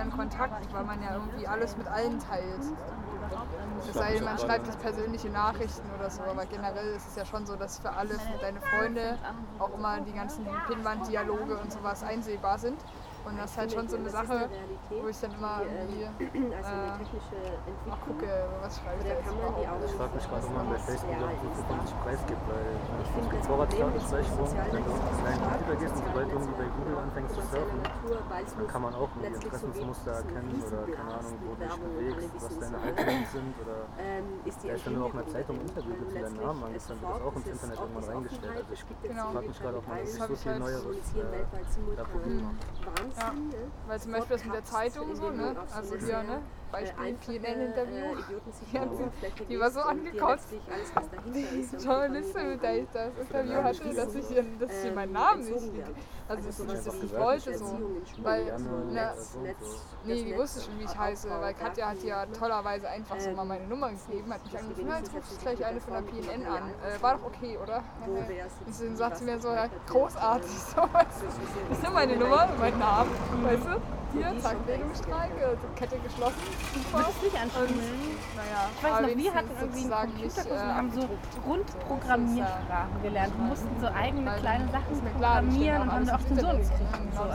berlin wedding brüsseler/antwerpener str. - social network talk
coffee break during a location walk in berlin wedding, talk about social networking, street ambience.